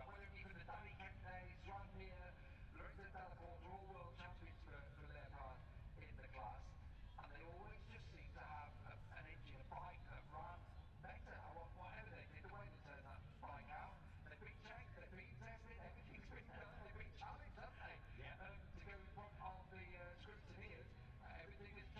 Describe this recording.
moto three free practice three ... copse corner ... dap 4060s to Zoom H5 ...